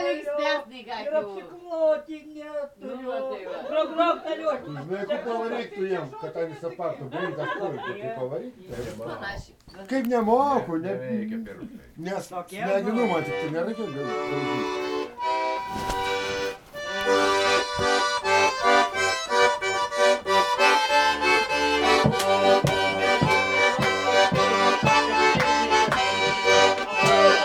Tvarkoj margoj-Margavone
Margavone tarp ezeru